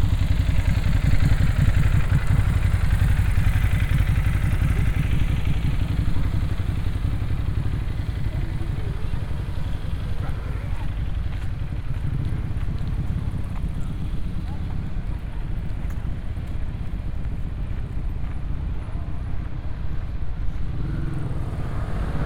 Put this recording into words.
Recording from Place de la Concorde - heavy traffic horns and sirens at Champs-Élysées. recorded with Soundman OKM + Sony D100, sound posted by Katarzyna Trzeciak